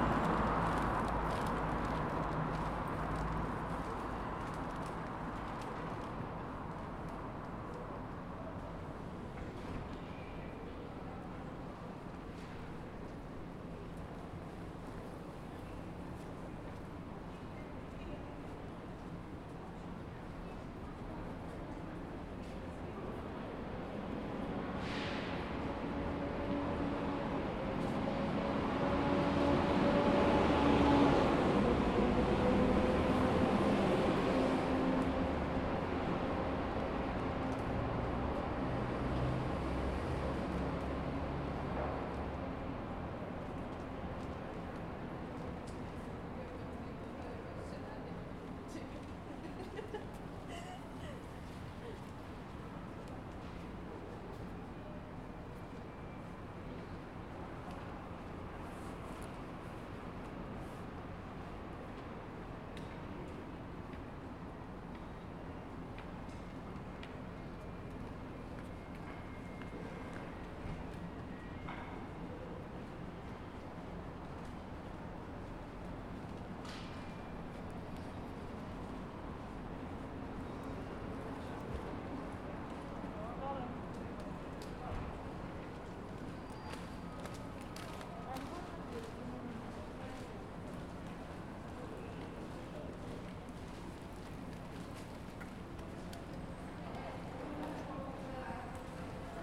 Ambient soundscape from underneath the 'Hielanman's Umbrella' on Monday 2nd May 2021 including traffic noise, footsteps/other sounds from pedestrians, and transport oriented public address notices from Glasgow Central train station. Recorded in stereo using a Tascam DR-40x.
Scotland, United Kingdom, 2 May 2021